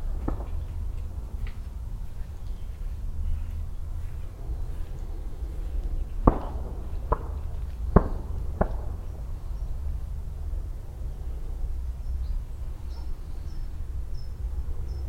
aubignan, garden shower and shots
In the garden area of a farm house on an early summer morning. The sound of a garden shower and the sound of several group of shots in the distance.
topographic field recordings - international ambiences and scapes